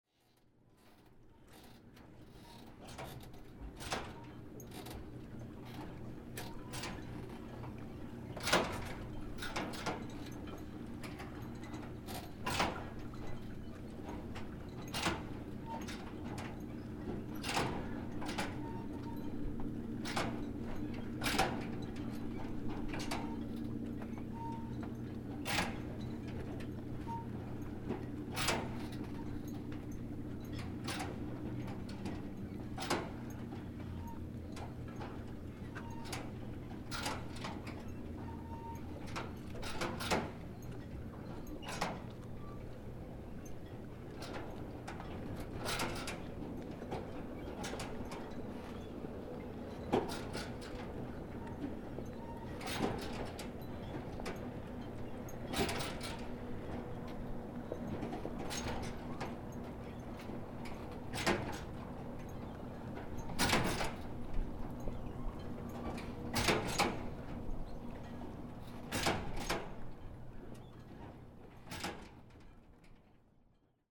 Marina, Red Bridge State Recreation Area, Amboy, IN, USA - Boats knocking against a pier, Red Bridge State Recreation Area
Boats knocking against a pier, Red Bridge State Recreation Area. Recorded using a Zoom H1n recorder. Part of an Indiana Arts in the Parks Soundscape workshop sponsored by the Indiana Arts Commission and the Indiana Department of Natural Resources.